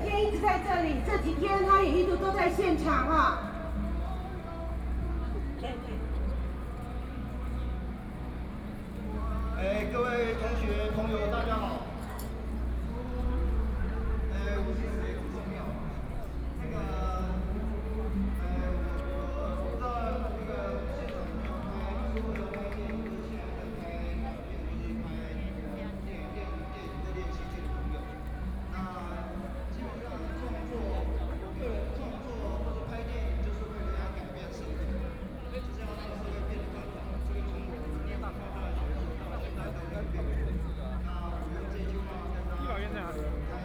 Jinan Rd., Taipei City - protest
Walking through the site in protest, People and students occupied the Legislative Yuan
Binaural recordings
Taipei City, Taiwan, 21 March 2014